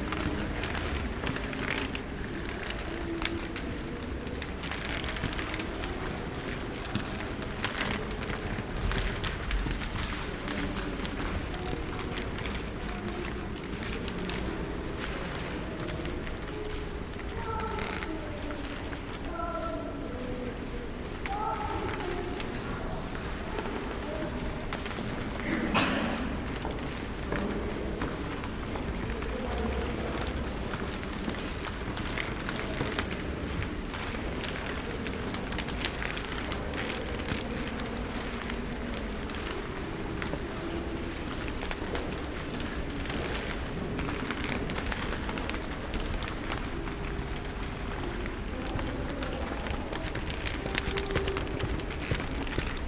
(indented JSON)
{
  "title": "parquet floor Kunst Historische Museum, Vienna",
  "date": "2011-06-03 16:05:00",
  "description": "wonderful creaky old parquet floor of this grand Museum",
  "latitude": "48.20",
  "longitude": "16.36",
  "altitude": "194",
  "timezone": "Europe/Vienna"
}